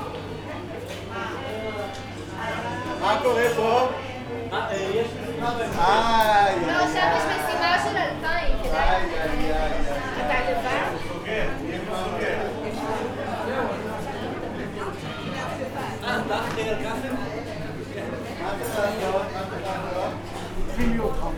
Binyamin mi-Tudela St, Acre, Israel - Falefel shop in Acre
Falefel shop in Acre